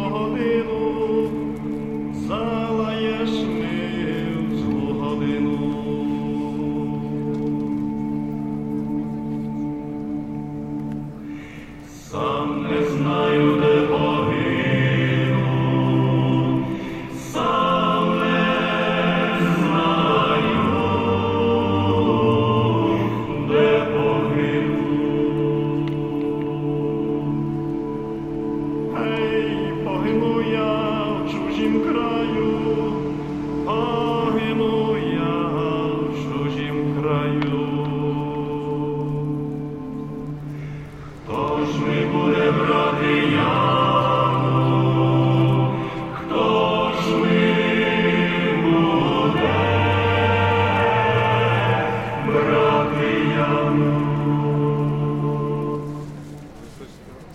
Pl. du Capitole, Toulouse, France - mourning song
anti-war demonstration for Ukraine
mourning song
Captation : ZOOMH6
France métropolitaine, France, March 2022